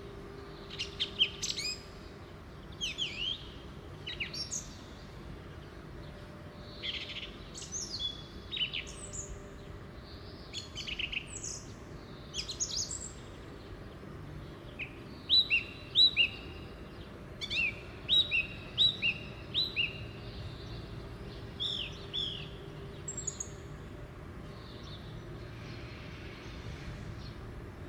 Площа Перемоги, Костянтинівка, Донецька область, Украина - Весенние птицы и насекомые
Пение птиц, насекомые. Звуки производства и утренний шум улицы
Donetska oblast, Ukraine, 10 April 2019